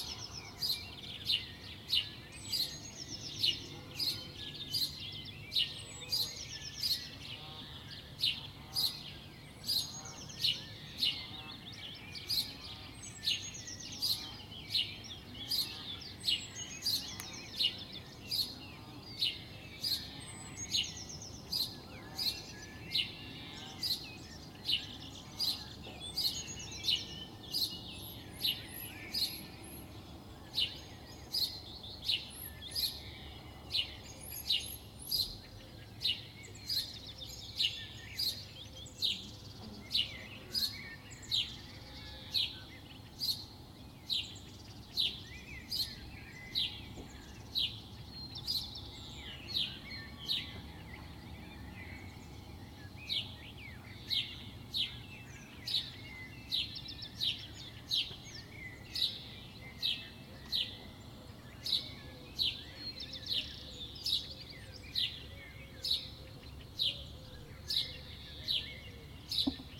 Hálkova, Česká Lípa - Ptáci / Birds
Česká Lípa city, housing estate Slovanka, morning birds singing from window of my home. Tascam DR-05x with build-in microphones, cutting in Audacity.
Severovýchod, Česká republika